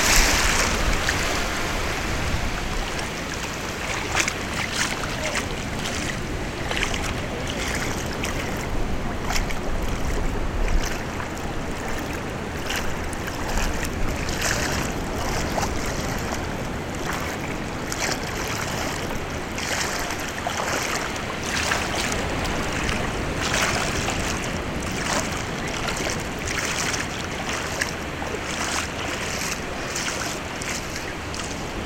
Morocco, Mirleft, Sidi Moulay Abdellah beach, Atlantic Ocean